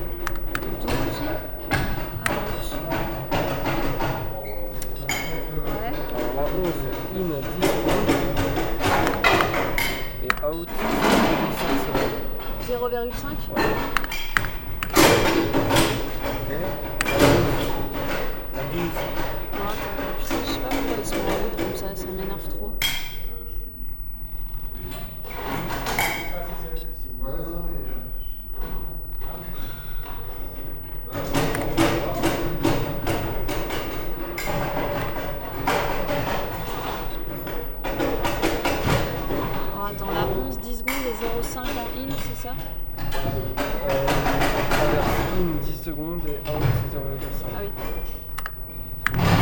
paris, la ferme du buisson, studio, setup
setup of a performance at the studio of the la ferme du buisson - the clicking and programming of the light board, conversations and noises of the genie
international city scapes - social ambiences and topographic field recordings